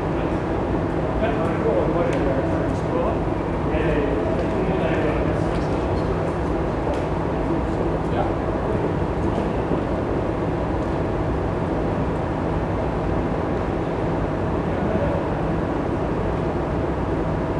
Very loud air conditioning in the foot tunnel under Cannon street station, Cousin Ln, London, UK - Very loud air conditioning under Cannon Street Station
Another tunnel for the Thames footpath and another loud air conditioning outlet. It is always dry and homeless people sleep here. It is beside the Banker riverside pub, very popular at lunchtime and a couple of historic cannons (guns) are placed incongruously nearby. Typical City of London juxtapositions. Tourists and joggers pass by.